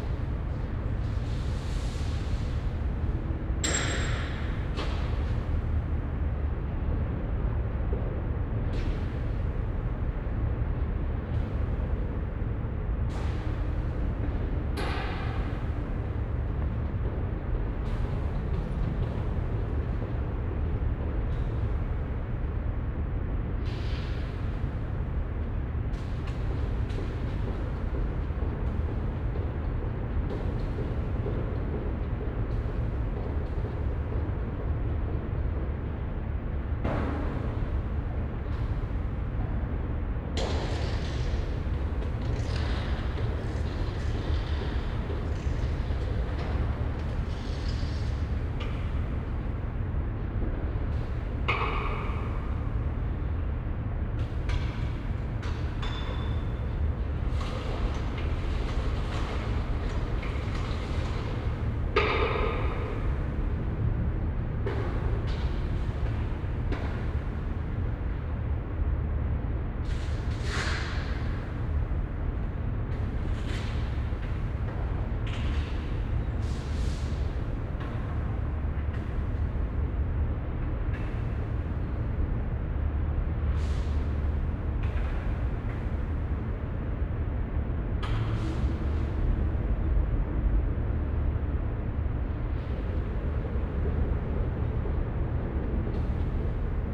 Mannesmannufer, Düsseldorf, Deutschland - Düsseldorf, KIT, end of exhibition hall
Inside the under earth exhibition hall at the end of the hall. The sound of the traffic in the Rheinufertunnel reverbing in the long tube like space while an exhibition setup.
soundmap nrw - sonic states and topographic field recordings